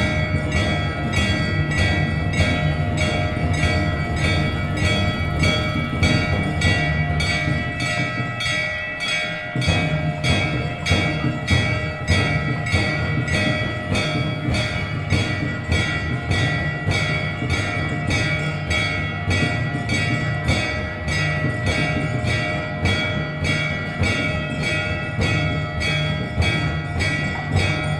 {"title": "Hubli, Sri Gurunathrudha Swami Math, Bells & Co", "date": "2011-02-25 19:32:00", "description": "India, Karnataka, Hubli, Sri Gurunathrudha Swami Math, Temple, Maha Shivaratri, Bells", "latitude": "15.34", "longitude": "75.12", "altitude": "625", "timezone": "Asia/Kolkata"}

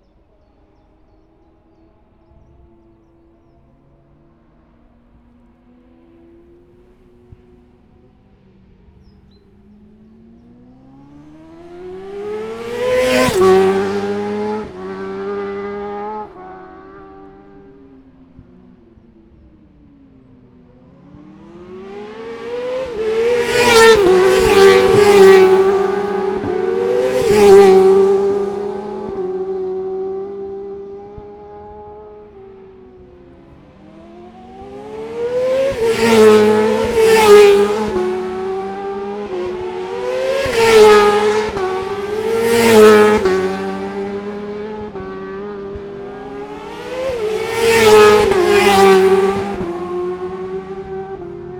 {"title": "Scarborough, UK - motorcycle road racing 2012 ...", "date": "2012-04-15 10:29:00", "description": "600cc qualifying ... Ian Watson Spring Cup ... Olivers Mount ... Scarborough ... open lavalier mics either side of a furry covered table tennis bat used as a baffle ... grey breezy day ... initially a bit loud ... with chiffchaff bird song ...", "latitude": "54.27", "longitude": "-0.41", "altitude": "147", "timezone": "Europe/London"}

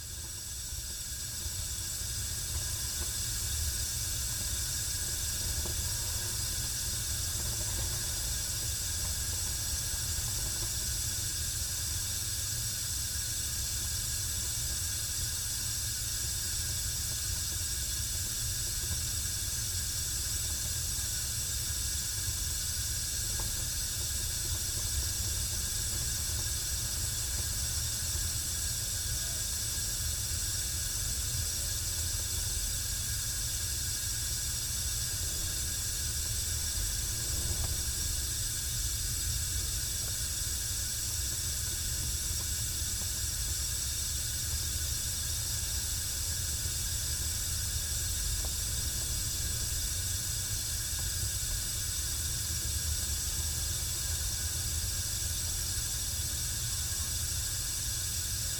{"title": "Cerro Sombrero, Región de Magallanes y de la Antártica Chilena, Chile - storm log - gas meter box", "date": "2019-03-04 11:55:00", "description": "gas meter box, wind (outside) SW 19 km/h\nCerro Sombrero was founded in 1958 as a residential and services centre for the national Petroleum Company (ENAP) in Tierra del Fuego.", "latitude": "-52.78", "longitude": "-69.29", "altitude": "64", "timezone": "America/Punta_Arenas"}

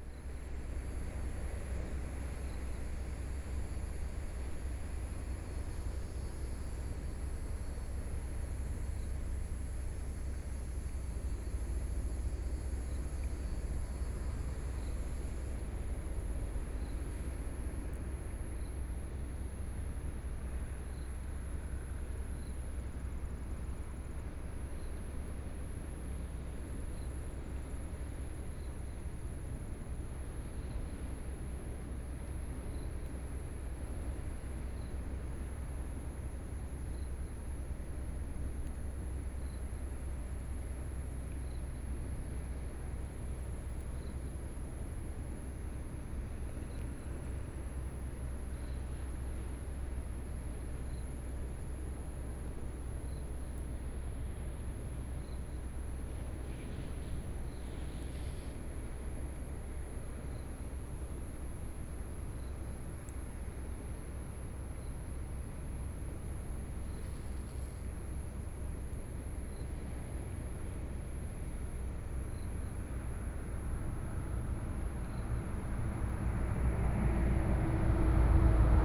{"title": "萊萊地質區, 貢寮區福連村 - Sitting on the coast", "date": "2014-07-29 17:58:00", "description": "Sitting on the coast, Sound of the waves, Traffic Sound, Hot weather", "latitude": "25.00", "longitude": "121.99", "altitude": "3", "timezone": "Asia/Taipei"}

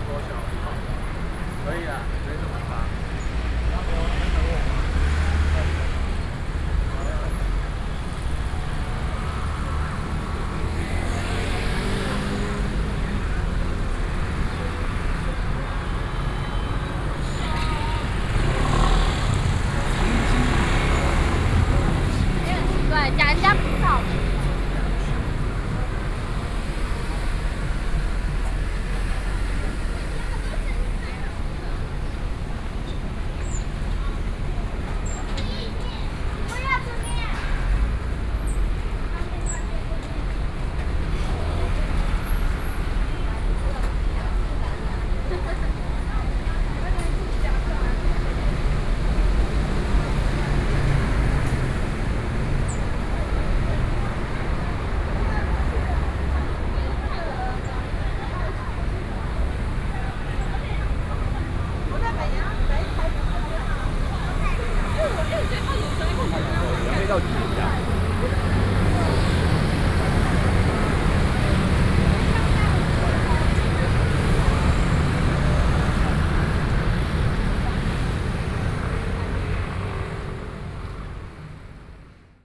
{"title": "Minzu Rd., Hsinchu City - soundwalk", "date": "2013-09-24 17:32:00", "description": "High school students on the streets after school, Traffic Noise, Sony PCM D50 + Soundman OKM II", "latitude": "24.81", "longitude": "120.97", "altitude": "28", "timezone": "Asia/Taipei"}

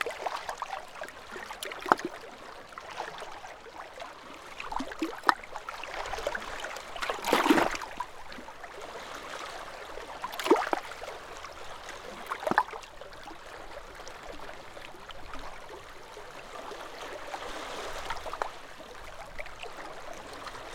{"title": "Norrmjöle klappuden rocks. Sea on rocks.", "date": "2011-06-17 14:57:00", "description": "Sea lapping against rocks on coastline.", "latitude": "63.65", "longitude": "20.13", "timezone": "Europe/Stockholm"}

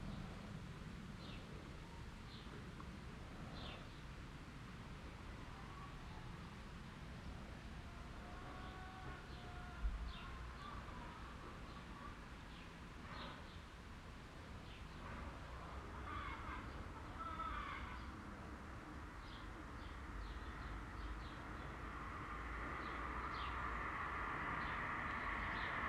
{"title": "Fouhren, Tandel, Luxemburg - Fouhren, through street N17", "date": "2012-08-07 13:50:00", "description": "An der Durchfahrtsstraße N17 an einem leicht windigem Sommertag. Die Geräusche vorbeifahrender Fahrzeuge unterbrechen die Stille des Ortes in der neben Vogelstimmen immer wieder das Gluckern von Hühnern zu hören ist.\nAt the through street N17 during a mild windy summer day. The silence of the village with the sounds of birds and chicken interrupted by the sounds of passing by traffic.", "latitude": "49.91", "longitude": "6.20", "altitude": "282", "timezone": "Europe/Luxembourg"}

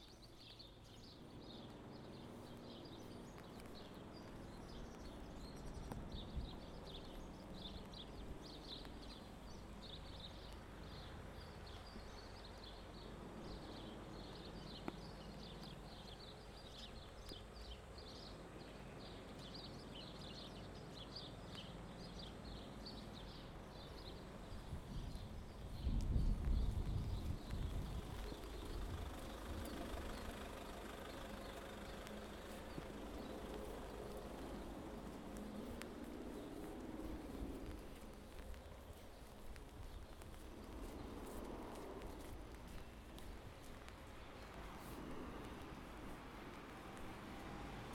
{"title": "Rue de Charlieu, Roanne, France - birds & pneumatic drill", "date": "2019-03-20 15:00:00", "description": "children from the Matel School went field-recording on the afternoon, and this is what they came up with.\nmerci pour tout et bonnes vacances les enfants !", "latitude": "46.05", "longitude": "4.08", "altitude": "278", "timezone": "GMT+1"}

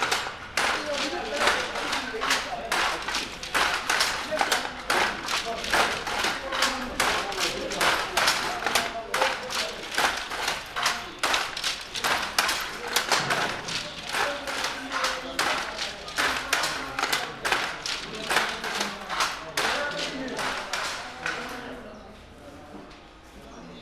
{"title": "Rue HLM, Dakar, Senegal - weavering factory", "date": "2020-02-28 16:24:00", "latitude": "14.72", "longitude": "-17.45", "altitude": "18", "timezone": "Africa/Dakar"}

